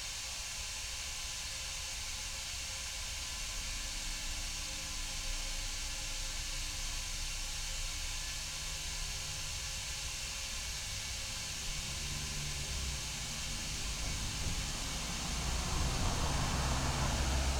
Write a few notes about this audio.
hum of a sort of gas station at Avenue Raimond Poincaré, silence after traffic... (Sony PCM D50, EM172)